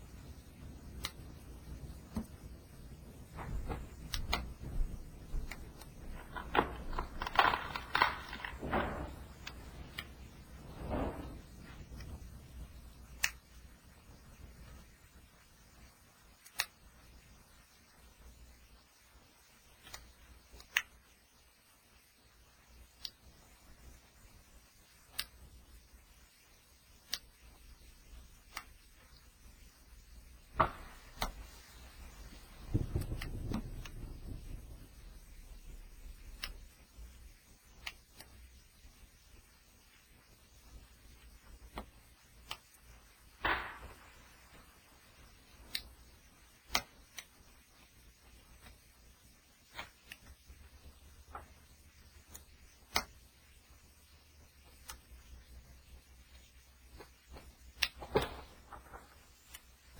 428 north grant ave, fort collins, co 80521
On the evening of October 25, 2011 Fort Collins Colorado experienced a strong fall snowstorm. The heavy wet snow resulted in an almost constanct sounds of snapping tree limbs, falling trees, and mini avalnches of snow falling from the trees.
Fort Collins, CO, USA, 25 October 2011